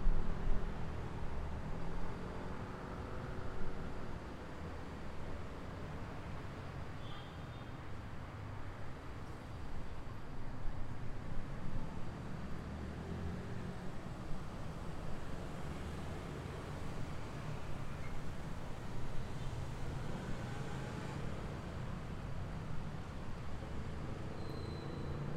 Los grillos con los carros son los sonidos mas permanetes, en este de brea y saflato mojados por la lluvia, por lo cual se siente mucha calama en la compocion.
Antioquia, Colombia, 4 September 2022, 23:42